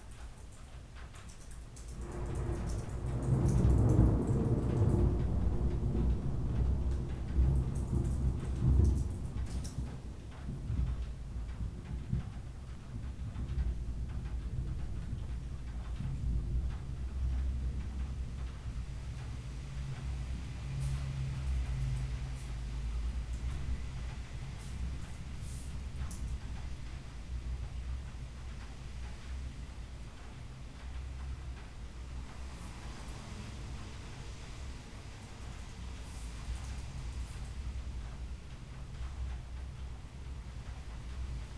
Cardener Street, Barcelona, España - Morning rain
Morning rain recorded with binaural mics stuck into a window.